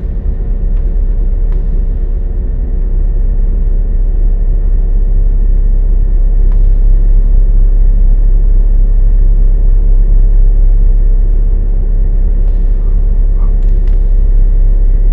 On the ferry in the car bladebone. The sound of the ship motor.
international sound scapes - topographic field recordings and social ambiences